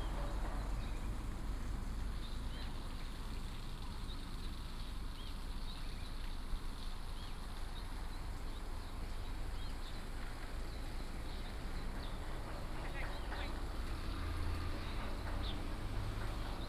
金山里6鄰, Guanxi Township - In the old bridge
In the old bridge, birds, Construction beating, river, Binaural recordings, Sony PCM D100+ Soundman OKM II
14 August 2017, 16:43